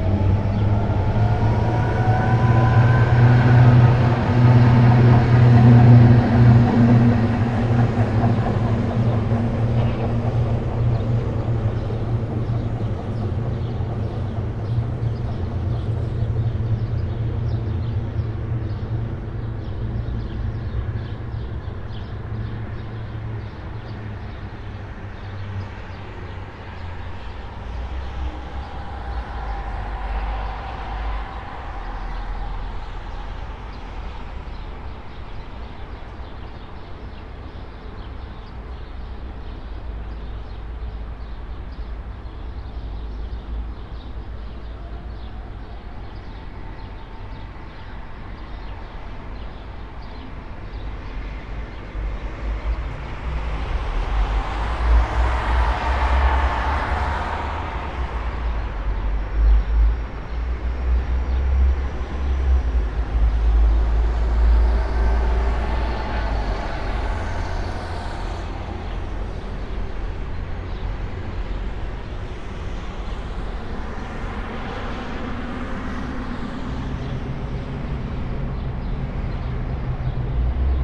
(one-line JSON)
{"title": "dawn window, Karl Liebknecht Straße, Berlin, Germany - sunrise at 04:54", "date": "2013-05-27 04:53:00", "description": "sunrise sonicscape from open window at second floor ... for all the morning angels around at the time\nstudy of reversing time through space on the occasion of repeatable events of the alexanderplatz ambiance", "latitude": "52.52", "longitude": "13.41", "altitude": "47", "timezone": "Europe/Berlin"}